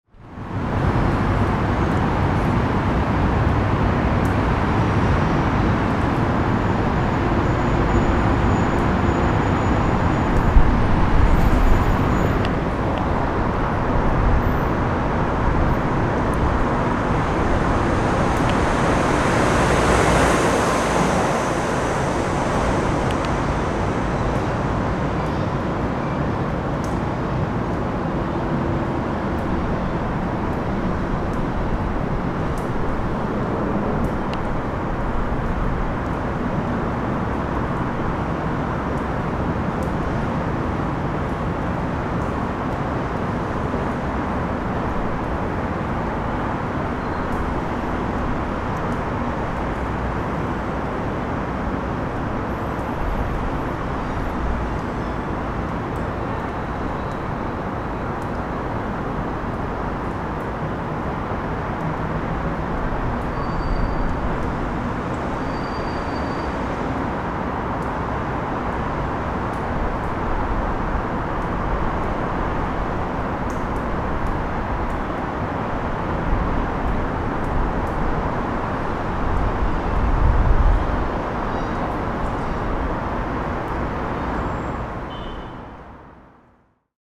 High Level Bridge, Newcastle, UK
First major bow-string girder bridge, built between 1847-1849.